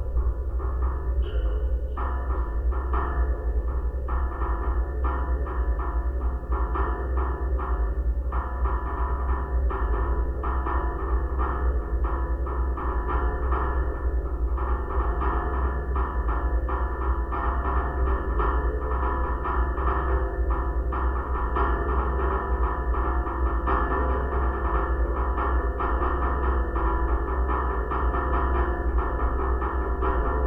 Kupiskis, Lithuania, the tower music
contact microphones placed on the base of the metallic tower working as one of supports for long metallic cable.
28 February 2015, 15:00